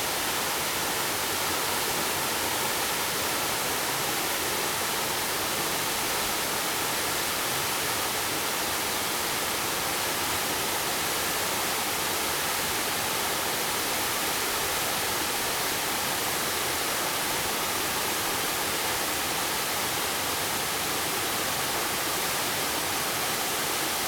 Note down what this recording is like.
waterfalls, Zoom H2n MS+XY +Sptial Audio